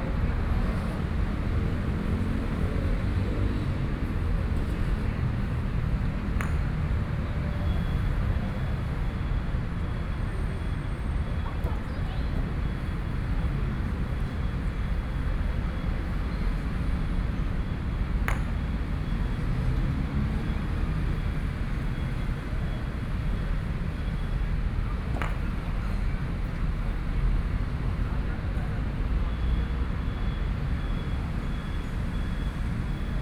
Old man playing croquet, Sony PCM D50 + Soundman OKM II